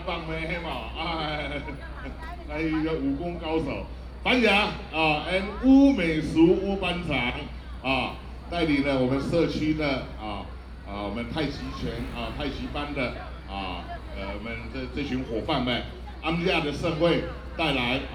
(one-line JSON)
{"title": "石城復興宮, Dongshi Dist., Taichung City - Community party", "date": "2017-11-01 19:53:00", "description": "Community party, traffic sound, Binaural recordings, Sony PCM D100+ Soundman OKM II", "latitude": "24.29", "longitude": "120.79", "altitude": "290", "timezone": "Asia/Taipei"}